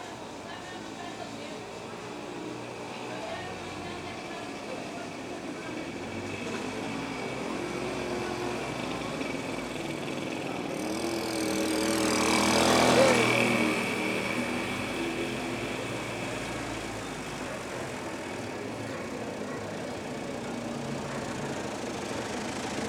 Santiago de Cuba, calle Carniceria, street life
street sounds in the afternoon, street vendors with handcarts, wheels made of ball bearings